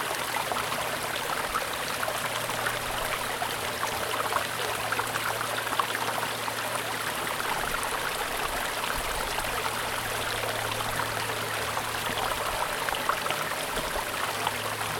{"title": "Coleton Fishacre - 2012-09-19 Coleton Fishacre stream", "date": "2012-09-19 12:45:00", "description": "Recorded in 2012. A small stream running through the grounds of Coleton Fishacre, with the sounds of occasional inquisitive insects.", "latitude": "50.35", "longitude": "-3.53", "altitude": "87", "timezone": "Europe/London"}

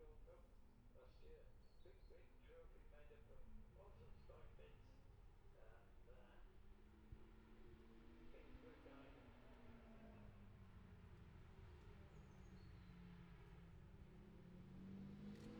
{"title": "Jacksons Ln, Scarborough, UK - olivers mount road racing ... 2021 ...", "date": "2021-05-22 11:03:00", "description": "bob smith spring cup ... classic superbikes practice ... dpa 4060s to Mixpre3 ...", "latitude": "54.27", "longitude": "-0.41", "altitude": "144", "timezone": "Europe/London"}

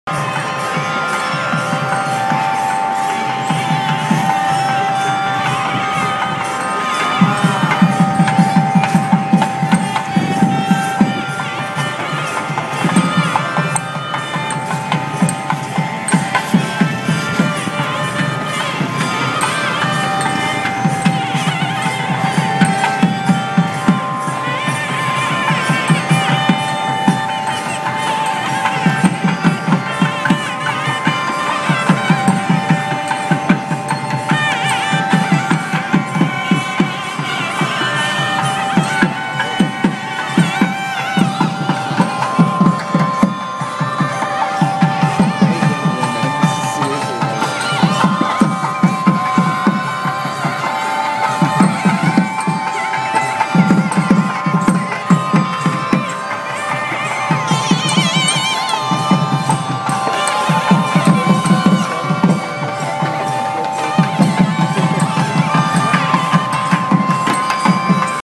{"title": "Nallur, Jaffna, Sri Lanka - Festival procession at Nallur Kovil, Jaffna", "date": "2012-11-27 18:00:00", "description": "Temple bells mixing with thavil drums and nadaswaram\nrecorded on iPhone", "latitude": "9.67", "longitude": "80.03", "altitude": "8", "timezone": "Asia/Colombo"}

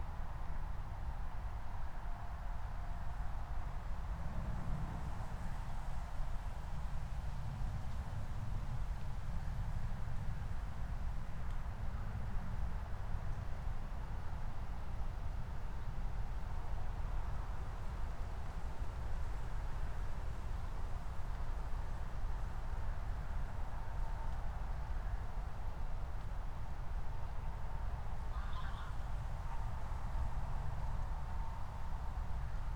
{"title": "Moorlinse, Berlin Buch - near the pond, ambience", "date": "2020-12-24 06:19:00", "description": "06:19 Moorlinse, Berlin Buch", "latitude": "52.64", "longitude": "13.49", "altitude": "50", "timezone": "Europe/Berlin"}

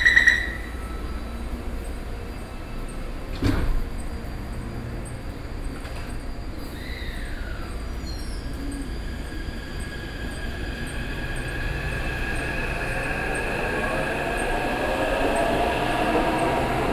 {"title": "Taipei, Taiwan - At MRT stations", "date": "2012-11-07 07:34:00", "latitude": "24.99", "longitude": "121.54", "altitude": "21", "timezone": "Asia/Taipei"}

